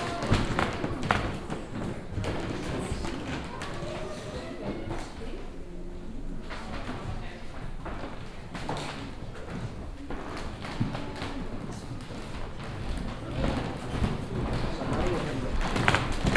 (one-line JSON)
{"title": "vianden, castle, wooden floor", "date": "2011-08-09 21:28:00", "description": "In the upper level of the castle. The sound of the wooden floor as the visitors move around on it.\nVianden, Schloss, Holzboden\nIm Obergeschoss des Schlosses. Das Geräusch des alten Holzbodens, auf dem die Besucher laufen.\nVianden, château, sol en parquet\nAu premier étage du château. Le bruit du parquet en bois sur lequel se déplacent les visiteurs.\nProject - Klangraum Our - topographic field recordings, sound objects and social ambiences", "latitude": "49.94", "longitude": "6.20", "altitude": "291", "timezone": "Europe/Luxembourg"}